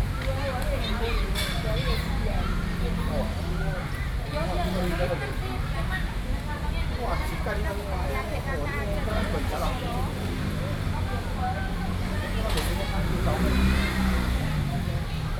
復興公園, 台北市北投區 - SoundMap 20121124-2